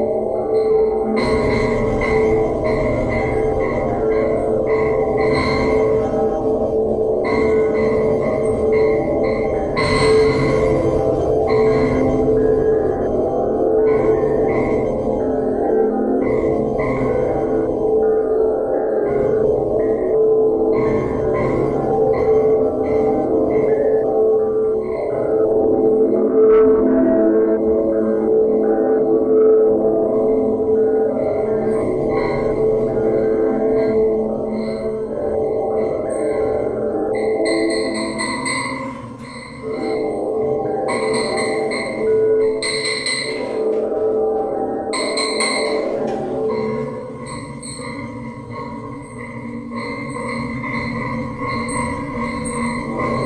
Workshop Criação de paisagens sonoras para documentário